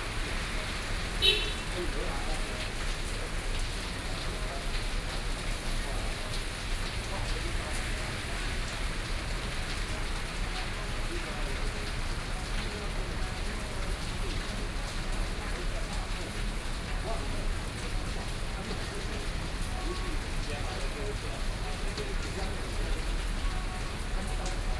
Taiyuan Rd., Datong Dist. - Heavy rain
Heavy rain
Sony PCM D50+ Soundman OKM II
19 June 2014, ~17:00